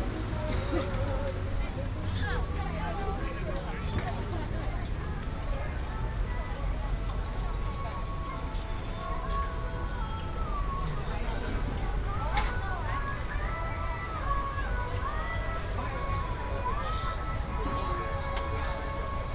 Walking in the night market, Binaural recordings, Sony PCM D100+ Soundman OKM II
27 September 2017, 17:53